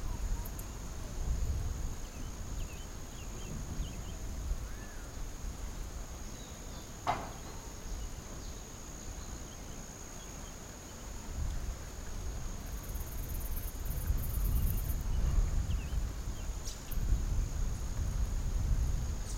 Morona-Santiago, Ecuador - Wakambeis ambiance
While recording a documentary, I could capture this ambiance from a town into the Ecaudorian rain forest. TASCAM DR100
20 February 2016, 12:00